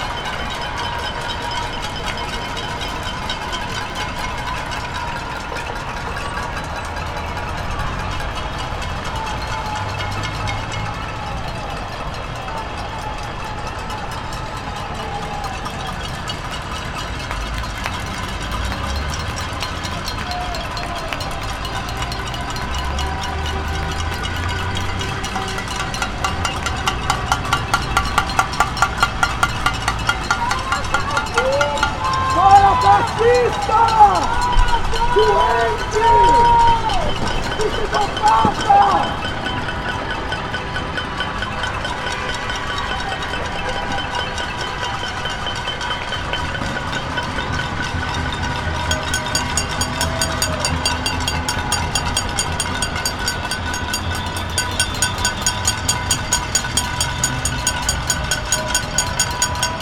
Against Bolsonaro, people bang pans and scream at the windows of their apartments at night in downtown Belo Horizonte, in JK Building.
2nd Day of protests in face of the crisis triggered by the Brazilian president after his actions when COVID-19 started to spread throughout the country.
Recorded on a Zoom H5 Recorder